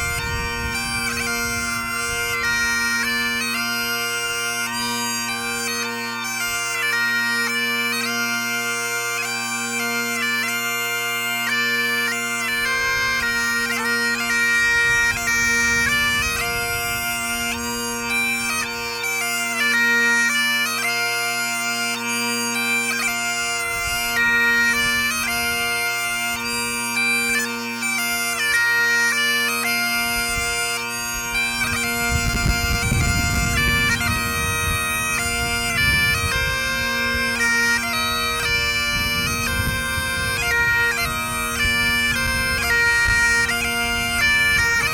Camaret-sur-Mer, France - Bagpipes on Pen-Hir
Bagpipes player in front of the sea, in front of Pen Hir
Recorded with zoom H6 and wind